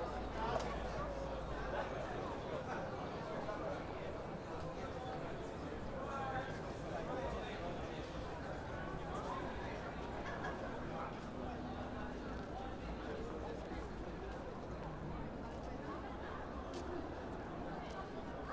Toruń, Poland - Festiwal Skyway 2011
12 August, 23:59